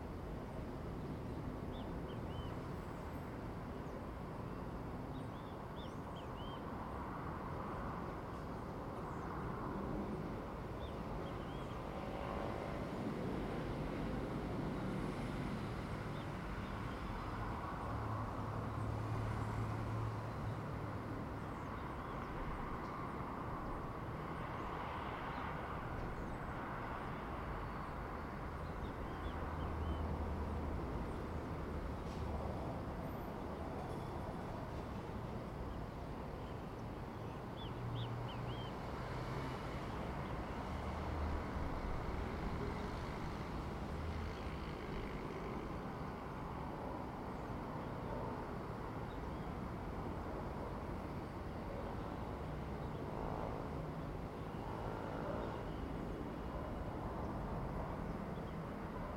R. Jaqueira - Cidade das Flores, Osasco - SP, 02675-031, Brasil - manhã na varanda campo aberto
captação estéreo com microfones internos
26 April, 07:35